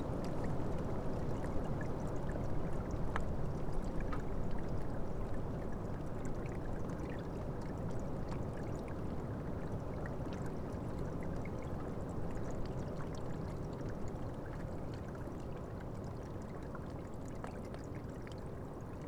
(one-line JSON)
{"title": "Lithuania, Utena, stream under ice", "date": "2013-03-16 15:45:00", "description": "frozen stream and water running under ice", "latitude": "55.50", "longitude": "25.57", "timezone": "Europe/Vilnius"}